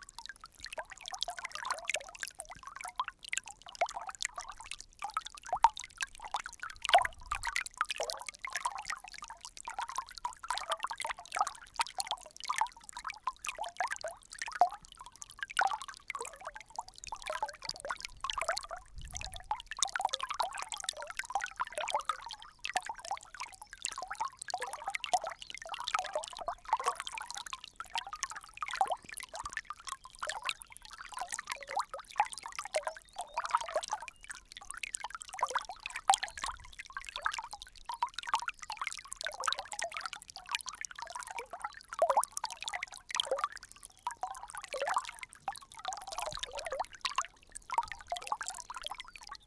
Le Pont-de-Montvert, France - Tarn spring
The Lozere Mounts. This is the Tarn spring. Water is just born to earth.